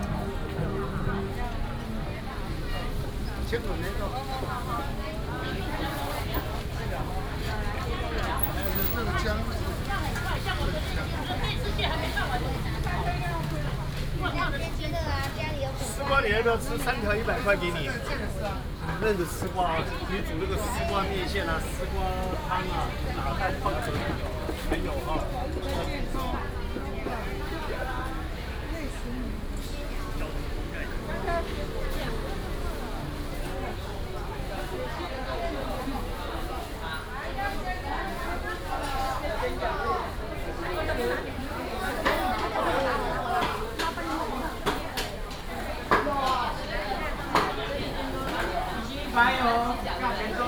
Xinyuan St., East Dist., Hsinchu City - Outdoor traditional market
in the traditional market, vendors peddling, Outdoor traditional market, Binaural recordings, Sony PCM D100+ Soundman OKM II
Hsinchu City, Taiwan, 26 August